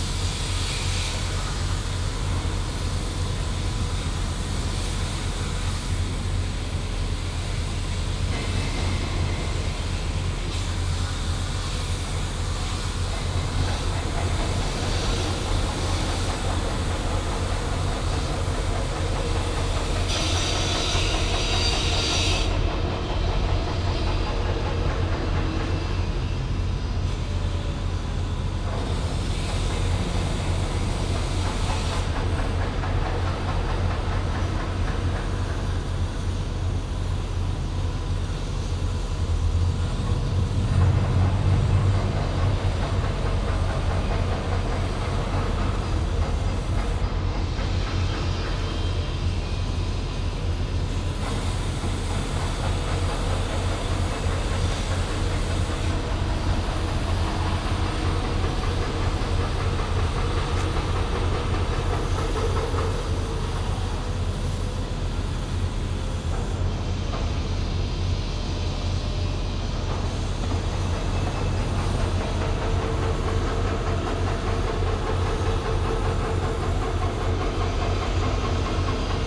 Yerevan, Arménie - Construction works
Erevan is a growing city. We are here on the center of a very big construction works. It's not especially an ASMR sound. During all day it sounds like that.